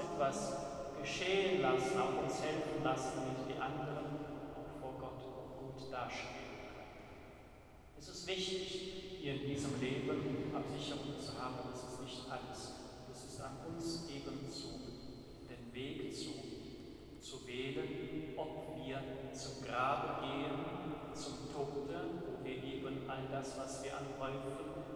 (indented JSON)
{
  "title": "Altenberger Dom - church service",
  "date": "2010-08-11 19:05:00",
  "description": "evening church service at altenberger dom. the priest talks about insurances, old-age plans and eternity. it's a bit confused...",
  "latitude": "51.06",
  "longitude": "7.13",
  "altitude": "102",
  "timezone": "Europe/Berlin"
}